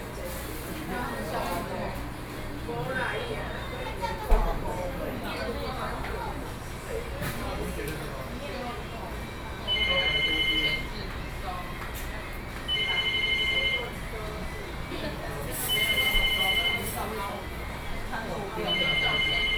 Beitou, Taipei - In the restaurant
In the restaurant, Sony PCM D50 + Soundman OKM II